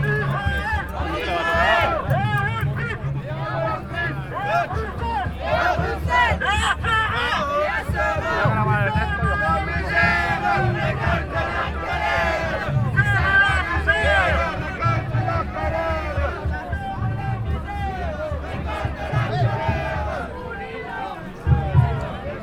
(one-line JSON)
{"date": "2011-10-15 14:17:00", "description": "Occupy Brussels - Boulevard Simon Bolivar, Spanish and French protests", "latitude": "50.86", "longitude": "4.36", "altitude": "21", "timezone": "Europe/Brussels"}